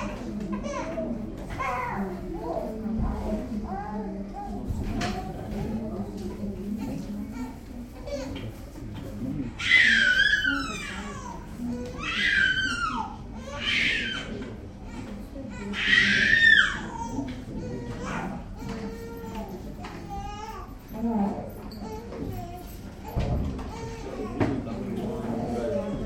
Pediatric department of the Municipal Hospital Na Bulovce.